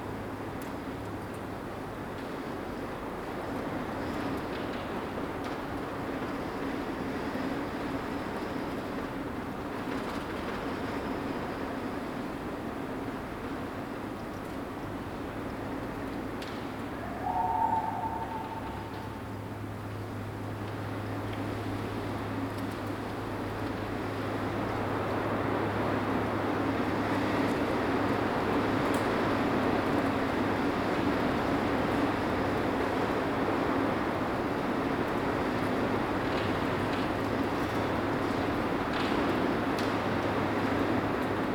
inside an old swimming pool - wind (outside) SW 19 km/h
Cerro Sombrero was founded in 1958 as a residential and services centre for the national Petroleum Company (ENAP) in Tierra del Fuego.